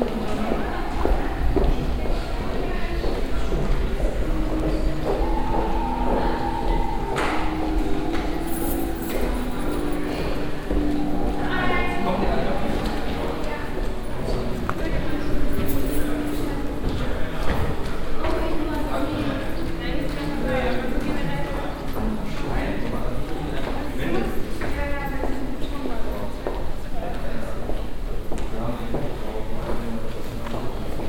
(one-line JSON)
{"title": "cologne, unter krahnenbäumen, music school", "date": "2009-06-19 12:49:00", "description": "inside the cologne music school - students at the cafetaria, steps and conversations, a signal bell, rehearsal rooms\nsoundmap d: social ambiences/ listen to the people - in & outdoor nearfield recordings", "latitude": "50.95", "longitude": "6.96", "altitude": "53", "timezone": "Europe/Berlin"}